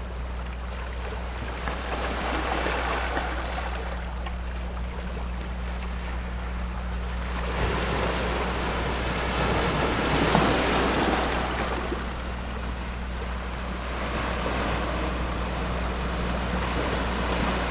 頭城鎮龜山里, Yilan County - Rocks and waves
Sitting on the rocks, Rocks and waves, Sound of the waves, Very hot weather
Zoom H6+ Rode NT4
Toucheng Township, Yilan County, Taiwan